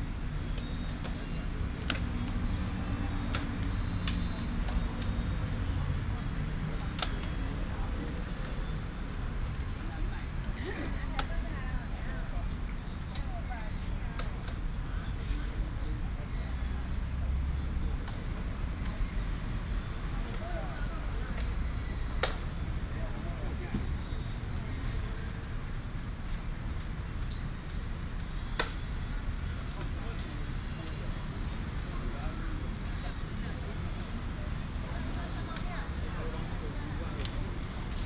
東山街, East Dist., Hsinchu City - playing chess
A lot of people playing chess, in the park, traffic sound, Binaural recordings, Sony PCM D100+ Soundman OKM II